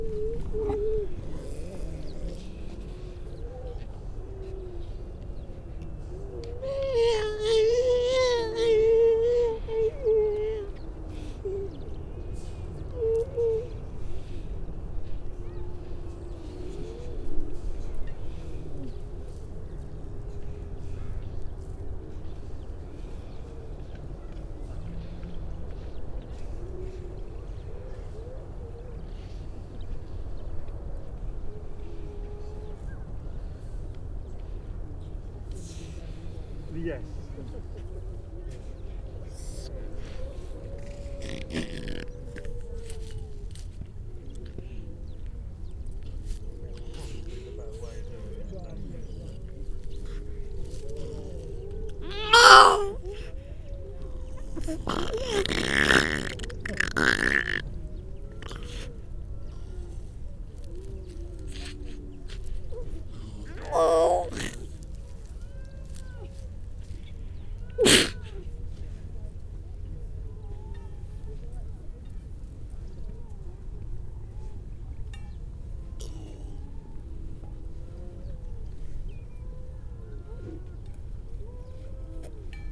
Unnamed Road, Louth, UK - grey seals soundscape ...
grey seal soundscape ... mainly females and pups ... parabolic ... starts with a small group then focus on a large pup virtually under my feet who has a sneeze and a snort ... or two ... bird calls ... skylark ... crow ...